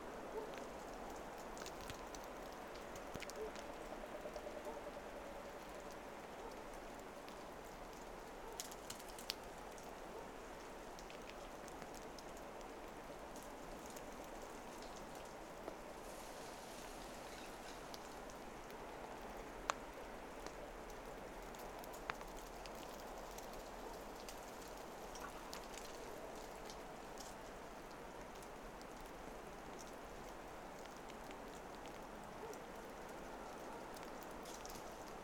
Utena, Lithuania, snow falls from trees
Rgere was heavy snowing through the last night. The trees are covered in snow...
Utenos apskritis, Lietuva, 27 January 2021, ~17:00